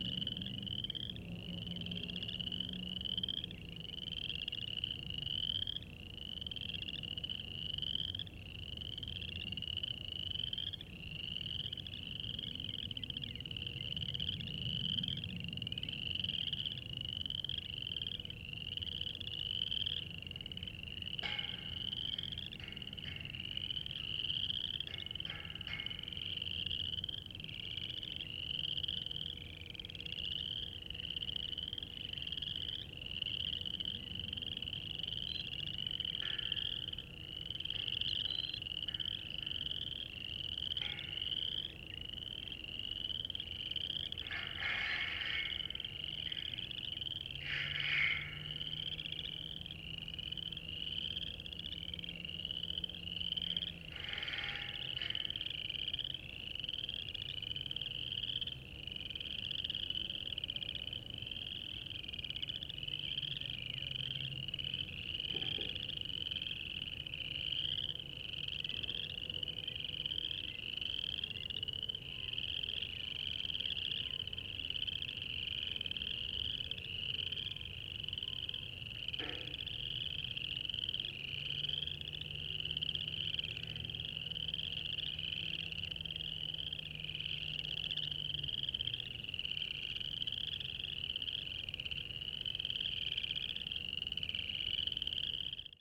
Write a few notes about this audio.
Spring peepers (chorus frogs) announce the beginning of spring. I sat on the side of the levee and recorded these frogs about 250 feet away from their seasonal pond. From prior experience I knew if I got any closer they would cease calling. As a result, there are also the sounds from the nearby concrete plant, birds circling overhead, planes and traffic.